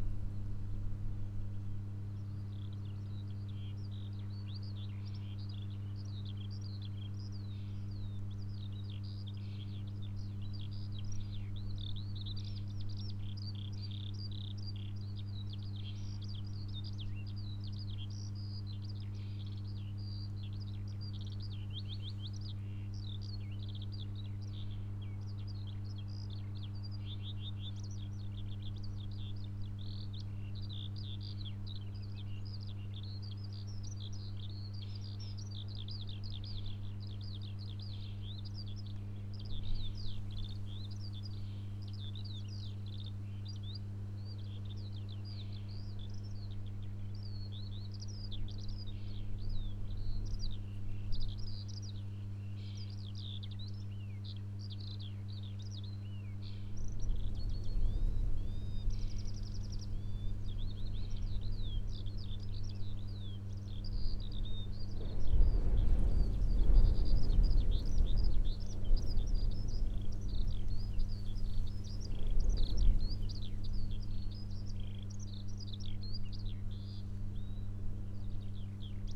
Utena, Lithuania, electric substatiom
small electric substation in the meadow. the first part of the recording - the ambience around, the second part - a close-up examination of electric field with coil pick-ups.
30 May, 15:20